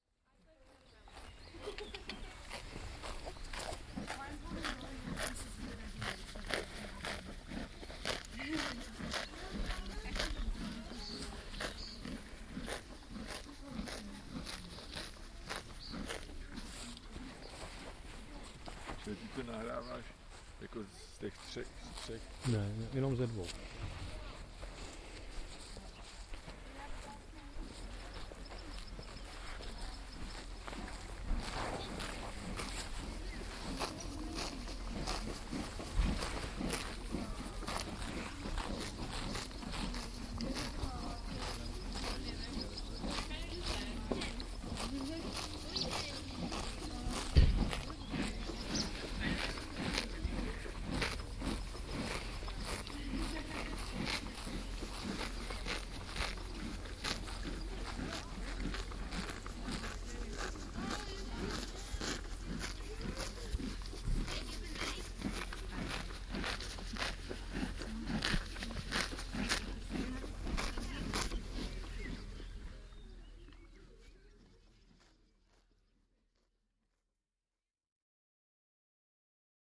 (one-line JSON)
{"title": "cisařský ostrov", "description": "two horses on the island near Troja", "latitude": "50.11", "longitude": "14.42", "altitude": "187", "timezone": "Europe/Berlin"}